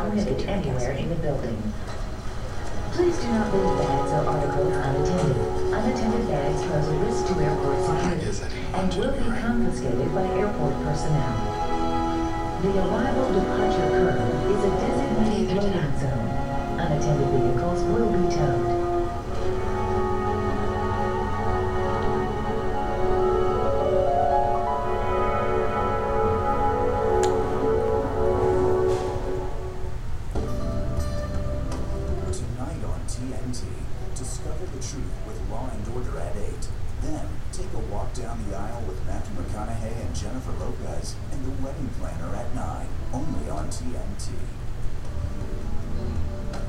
Alexandria International Airport - Alexandria International Airport Departure Lounge
Waiting, watching television in the departure lounge at Alexandria International Airport.
19 March 2008